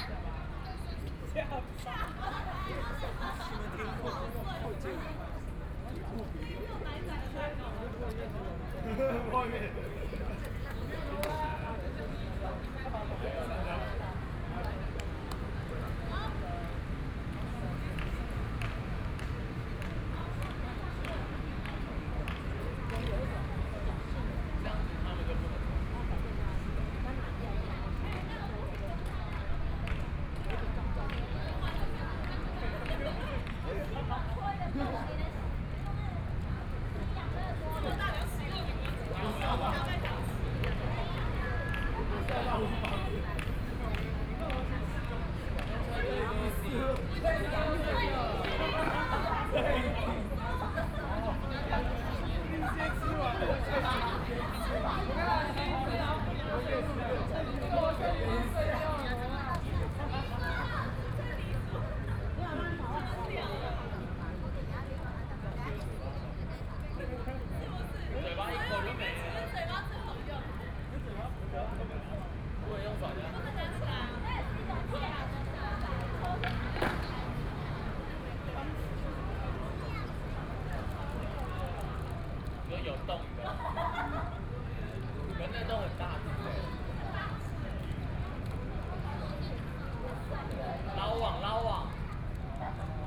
Taipei Cinema Park - Plaza
Students and people on the square, Chatting and rest of the public, Group of young people are practicing skateboard and dance, Binaural recordings, Sony PCM D50 + Soundman OKM II